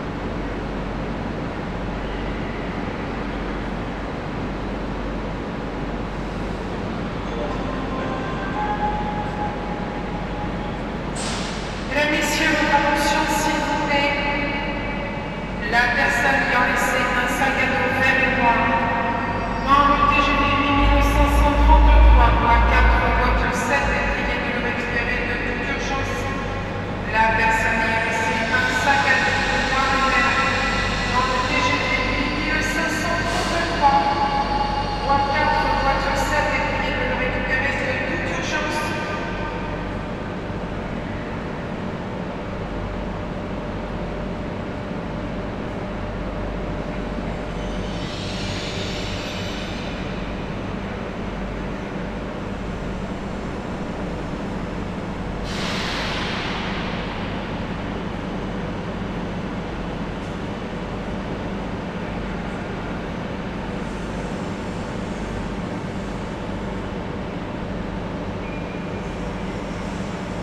Pont en U, Bordeaux, France - BDX Gare 01
train station
Captation : ZOOMH6
Nouvelle-Aquitaine, France métropolitaine, France, August 2022